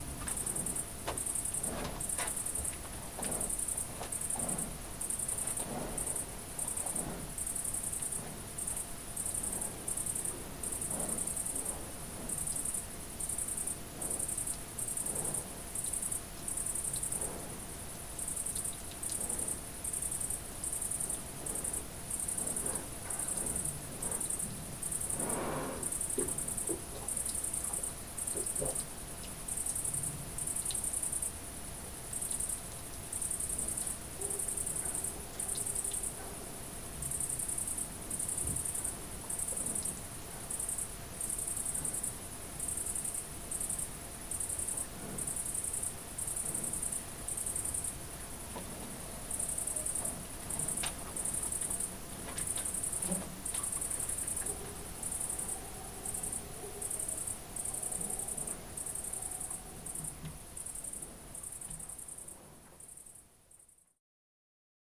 Unnamed Road, Aminteo, Ελλάδα - Night Recording
Record by : Alexandros Hadjitimotheou